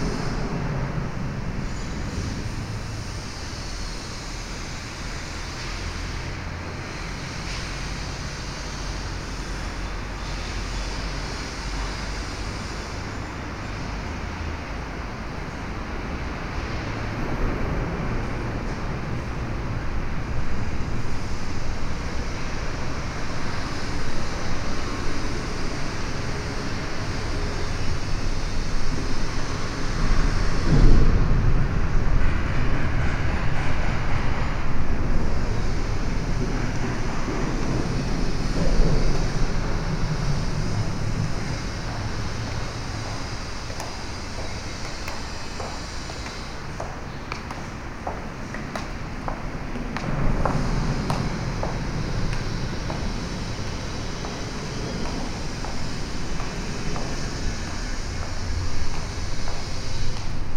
next to Guggenheim Bilbao - next to Guggenheim Bilbao (schuettelgrat)
next to Guggenheim Bilbao, under the motorway at noon
Bilbao, Spain, 23 August 2010